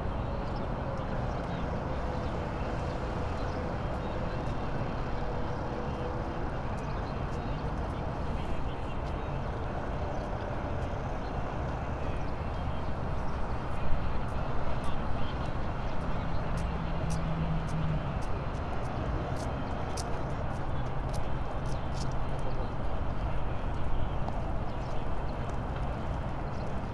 Osaka, Kita, Sugaharacho, canal bank - resting man with a radio
recording on a water canal bank, pulsating, dense, continuous city ambience, sounds like a huge fan. passing boat, water splashing, construction workers, walking couples - suits, big sunglasses, hushed conversations words. suspicious glimpses at the recorder. and the gaijin phonographer. then a 180-degree turn. a few older man warming their bones in first blasts of spring sun. one of them listening to small, ultra lo-fi, portable radio. sudden bike roar from the bridge above strangles the relaxing, lethargic space and wraps and puts the recording to an end.